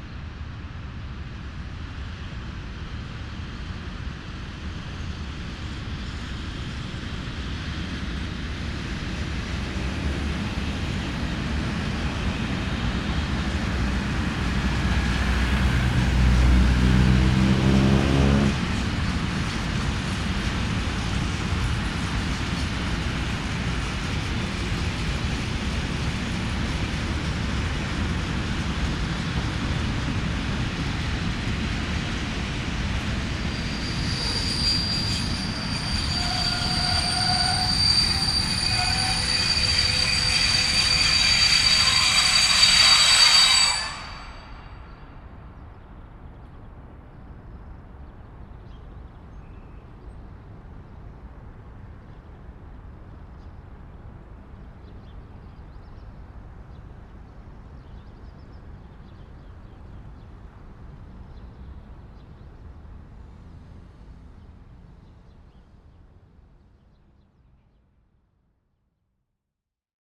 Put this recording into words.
Quai de la gare, DPA 4011 + bonnettes DPA + PSP2 + DAT